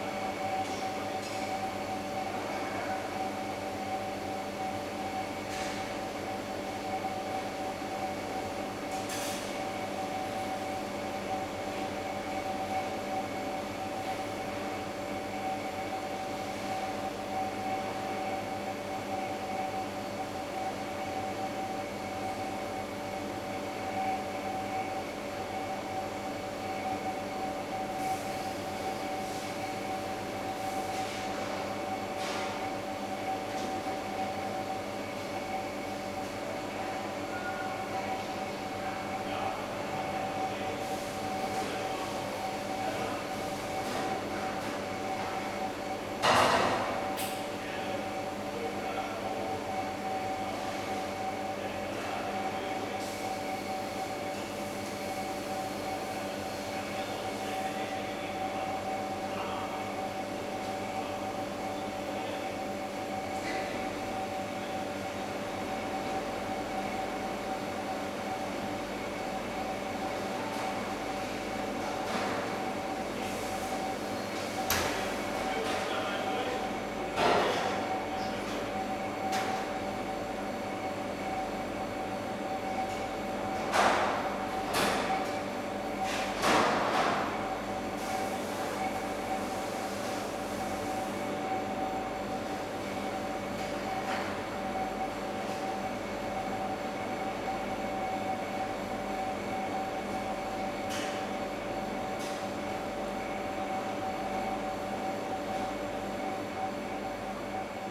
berlin, finckensteinallee: cafeteria of the german federal archives - the city, the country & me: canteen kitchen
cafeteria of the german federal archives berlin-lichterfelde, lulled by the sound of refrigerators, kitchen staff preparing lunch
the city, the country & me: november 11, 2015